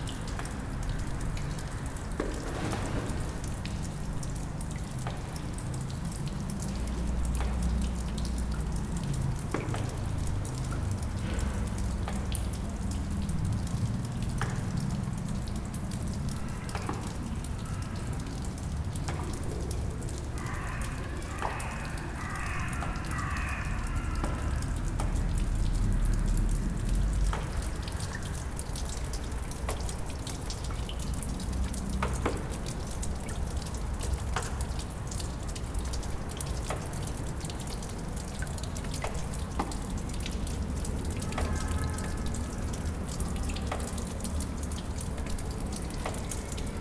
Ruissellement dans la grotte des Buttes Chaumont, oiseaux, circulation
Water dripping in the grotto of Buttes Chaumont, birds, traffic
Recorded with a Zoom H4n